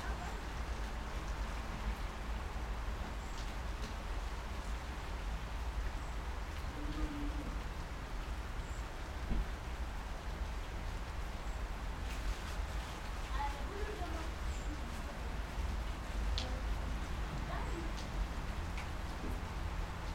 Jáchymovská, Františkov, Liberec, Česko - Cold rainy Firday
Cold rainy Friday on the balcony of an apartment building in Liberec. Childrend play under the balcony.